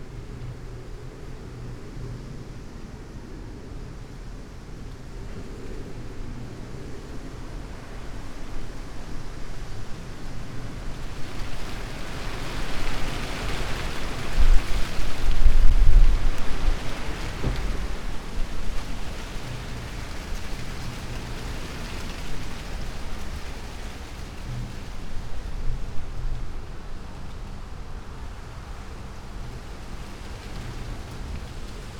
tree with dry leaves, Daisen-in garden, Kyoto - november winds, tree